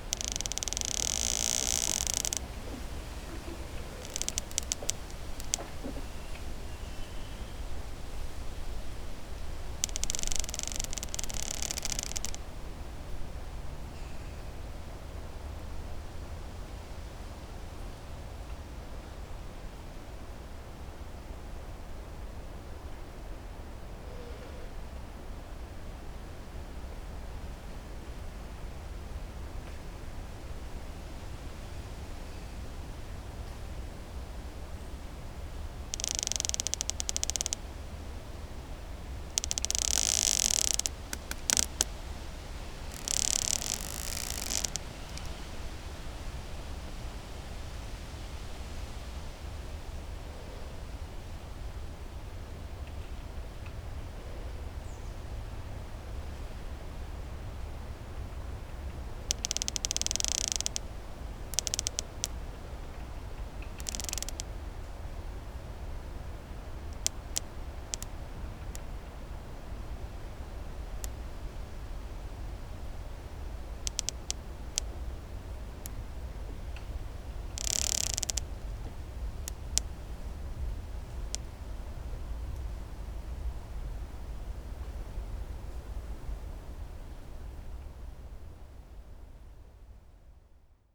Morasko nature reserve - branch slide
branch of a fallen tree pressed against other tree. nice crackles when the three is moved by wind. (roland r-07 internal mics)
Suchy Las, Poland, 2 September